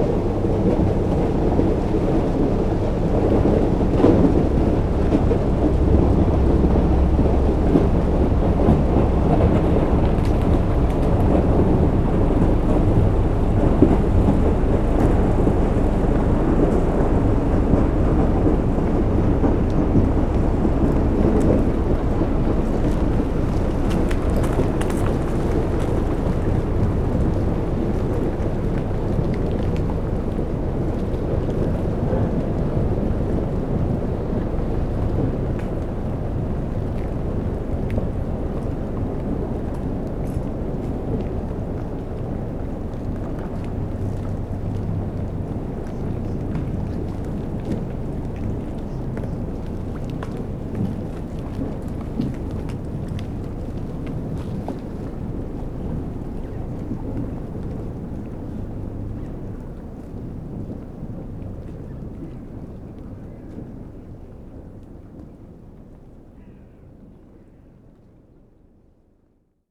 berlin, plänterwald: spreeufer - the city, the country & me: icebreaker
icebreaker opens a ship channel through the ice
the city, the country & me: february 12, 2012